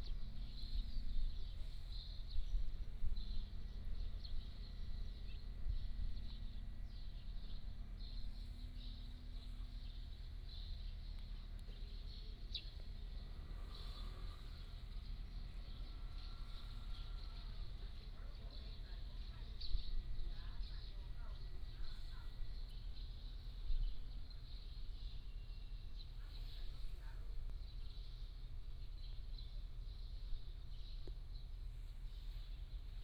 Small village, Traffic Sound, Birds singing
Penghu County, Husi Township, 22 October 2014